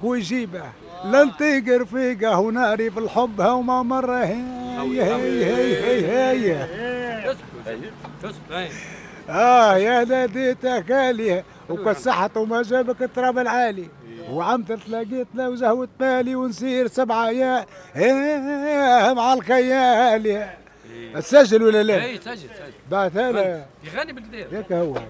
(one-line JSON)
{"title": "زنقة الشماعية, سوق البلاغجية, Tunis, Tunisie - Tunis - Souk", "date": "2017-06-14 11:00:00", "description": "Tunis\nAmbiance dans le Souk", "latitude": "36.80", "longitude": "10.17", "altitude": "28", "timezone": "Africa/Tunis"}